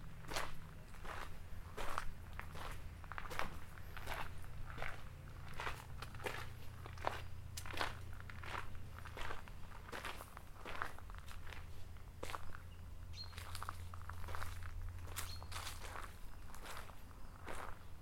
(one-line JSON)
{"title": "Espace culturel Assens, um das Haus", "date": "2011-10-02 14:25:00", "description": "rund um das Espace culturel in Assens, ländliche Idylle mit Unterbrüchen", "latitude": "46.61", "longitude": "6.63", "altitude": "643", "timezone": "Europe/Zurich"}